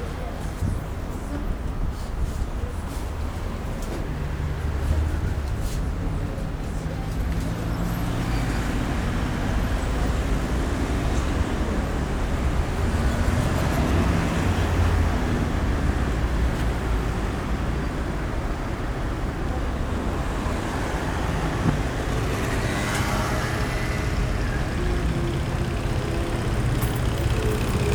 Fumer Crée Une Dépendance Irréversible
wld, world listening day